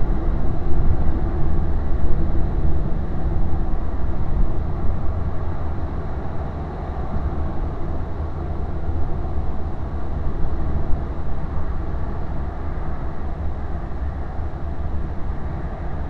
hoscheid, sound sculpture, lauschinsel - hoscheid, sound sculpture lauschinsel
a second recording of the same place, here with a new headphone application that is attached to the wooden tubes of the installation.
Projekt - Klangraum Our - topographic field recordings, sound sculptures and social ambiences